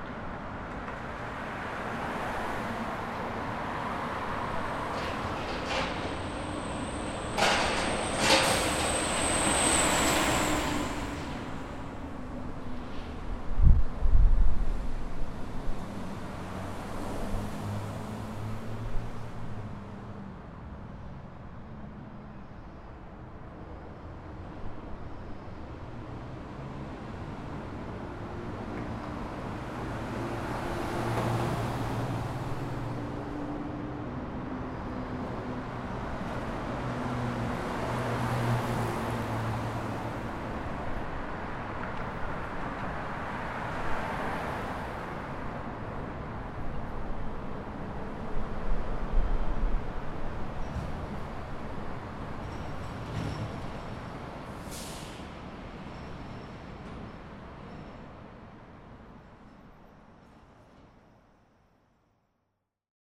Remington, Baltimore, MD, USA - Under I83
Recorded walking on North ave under I83